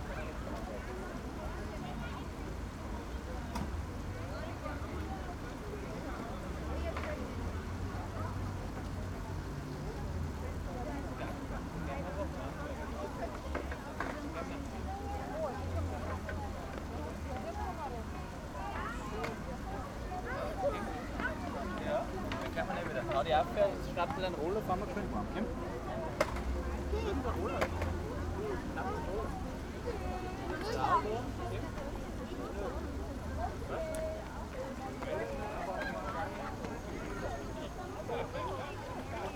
Hessenpark, Hessenpl., Linz - playground ambience /w fountain
playground ambience and fountain at Hessenpark, Linz.
(Sony PCM)